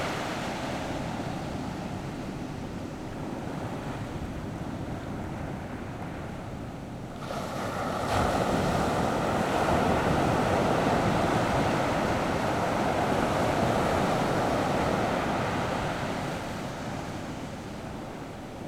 Sound wave, In the beach
Zoom H6 +Rode NT4
馬祖列島 (Lienchiang), 福建省, Mainland - Taiwan Border, 13 October 2014, 17:56